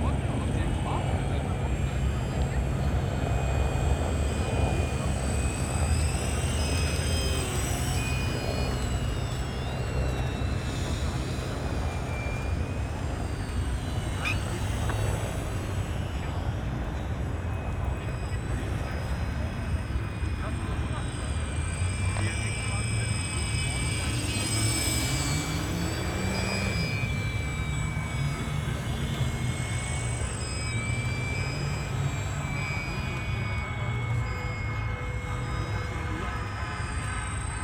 Tempelhofer Feld, Berlin, Deutschland - model planes practicing
enthusiasts with different kinds of model planes practicing at the Tempelhof air field.
(SD702, Audio Technica BP4025)